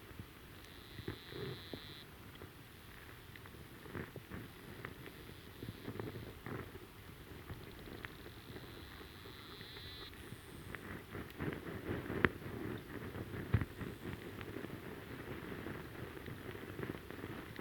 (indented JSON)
{
  "title": "river Sventoji, Lithuania, under",
  "date": "2021-05-02 16:20:00",
  "description": "underwater sound flow in river Sventoji. hydrophone recording.",
  "latitude": "55.66",
  "longitude": "25.19",
  "altitude": "76",
  "timezone": "Europe/Vilnius"
}